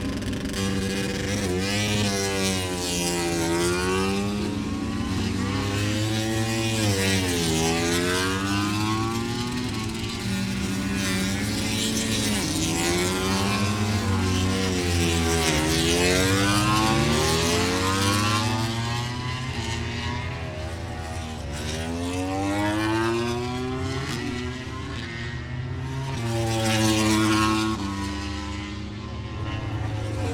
Towcester, UK - british motorcycle grand prix 2022 ... moto grand prix ...
british motorcycle grand prix 2022 ... moto grand prix practice start ... dpa 4060s on t bar on tripod to zoom f6 ...